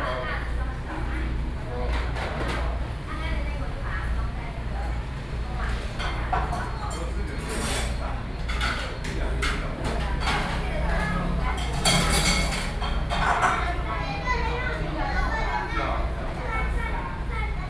Beitou - In the restaurant
Noisy restaurant, Sony PCM D50, Binaural recordings